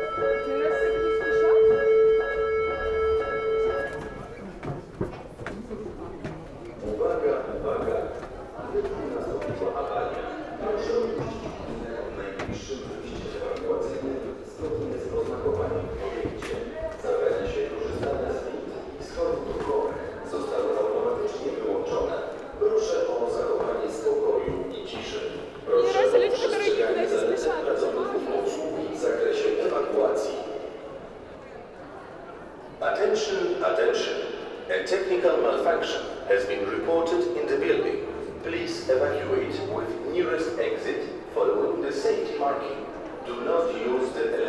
sudden alarm and building evacuation at Wroclaw bus terminal, back to normal after a few minutes, without notice
(Sony PCM D50)